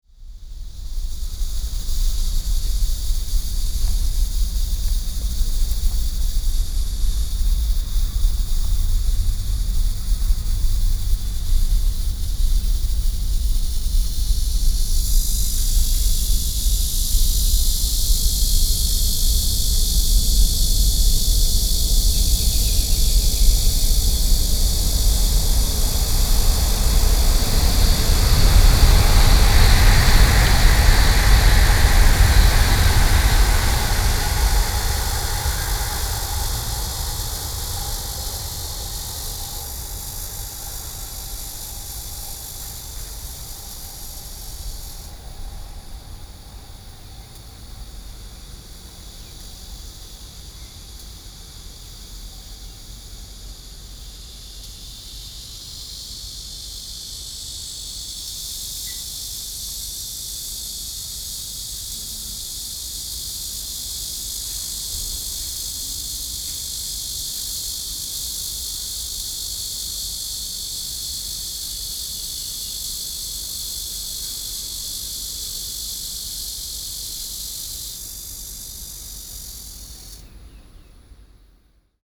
Shulin District, New Taipei City - Cicada, high-speed rail
Birds with high-speed rail, The high-speed train traveling out from the tunnel after., Binaural recordings